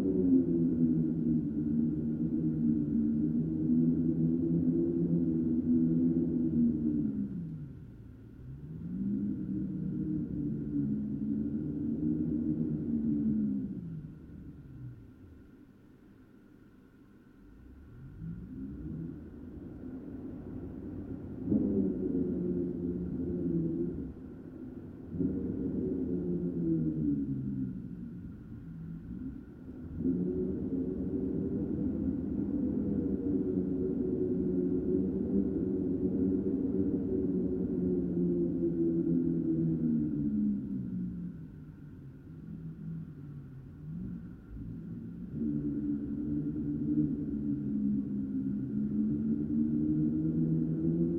gorod Vorkuta, République des Komis, Russie - howling wind
Mid-side stereo recording of an howling polar wind in Vorkuta.